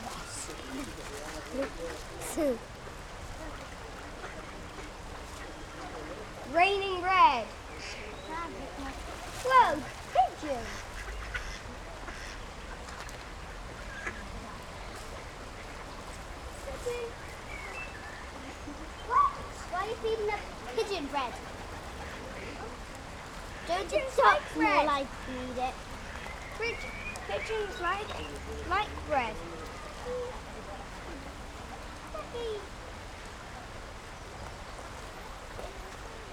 Walking Holme Feeding The Ducks
Kids feeding bread to the ducks and ducklings.